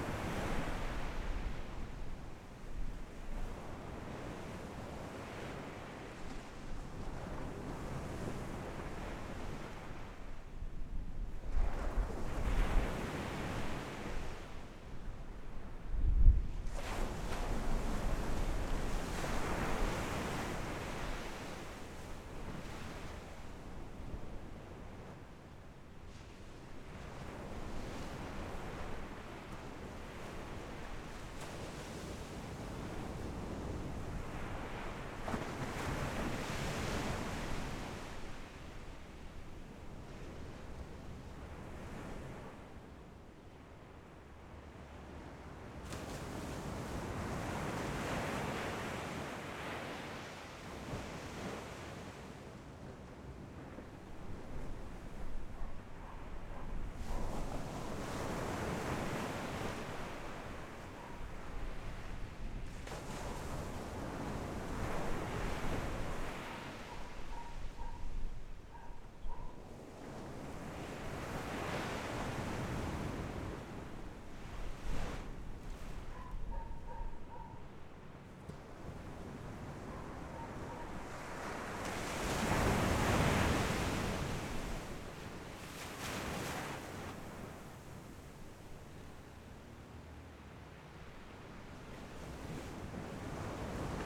午沙港, Beigan Township - Sound of the waves
Sound of the waves, Very hot weather, Small port
Zoom H6 XY
馬祖列島 (Lienchiang), 福建省 (Fujian), Mainland - Taiwan Border, 13 October 2014